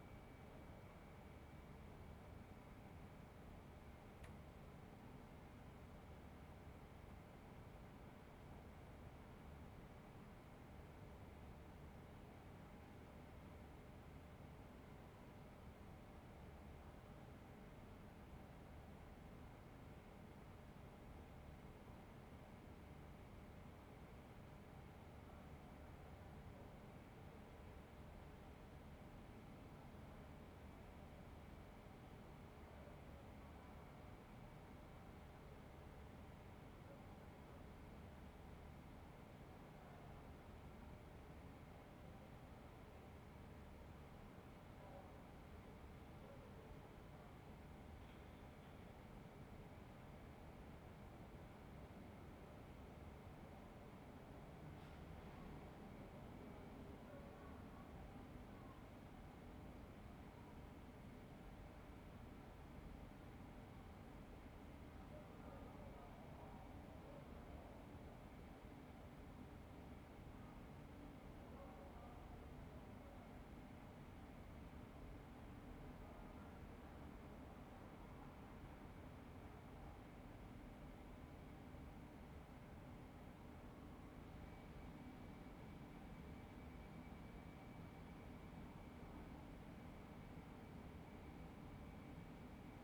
"Night on west terrace April 1st" Soundscape
Chapter XXX of Ascolto il tuo cuore, città, I listen to your heart, city
Wednesday April 1stth 2020. Fixed position on an internal terrace at San Salvario district Turin, three weeks after emergency disposition due to the epidemic of COVID19. Different position as previous recording.
Start at 10:52 p.m. end at 11:39 p.m. duration of recording 47'02''.
Piemonte, Italia, April 2020